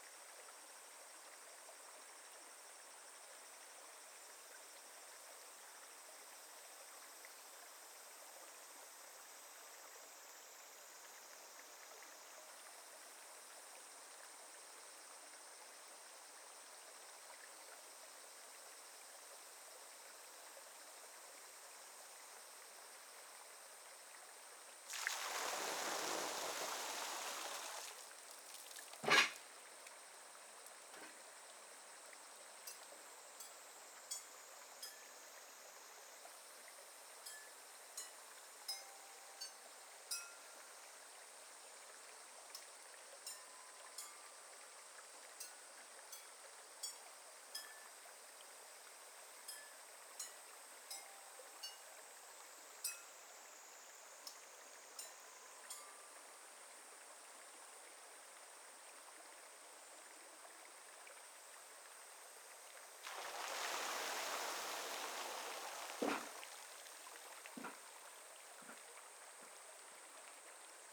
Imarichō, Imari, Saga, Japan - Water Scoop Earth Mills in Cool Shade
Traditional river fed clay mills at Imari (伊万里) Pottery Village. The chimes are a motion sensor triggered pottery bell tree that is installed next to the mills. Summer 2020.